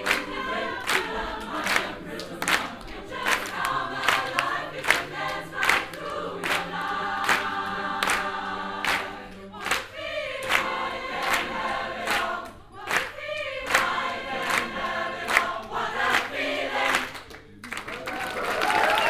cologne, filmhaus, filmhaus choir
first performance of the cologne based filmhaus choir conducted by guido preuss - recording 02
soundmap nrw - social ambiences and topographic field recordings